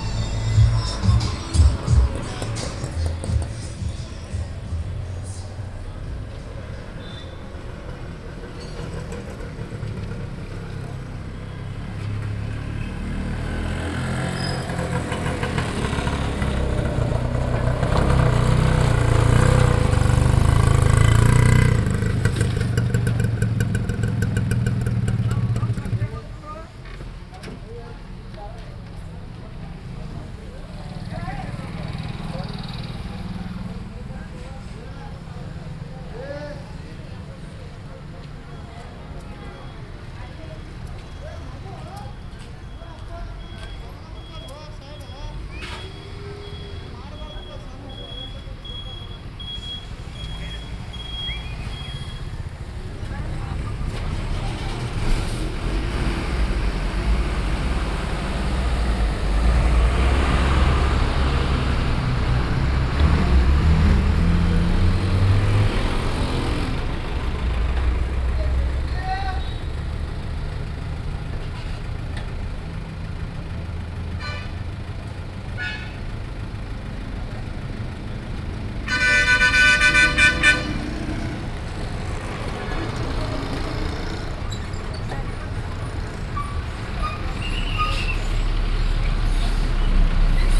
Saundatti, Saundatti road, crossroad
India, Karnataka, road traffic, bus, rickshaw, binaural
22 January 2011, ~20:00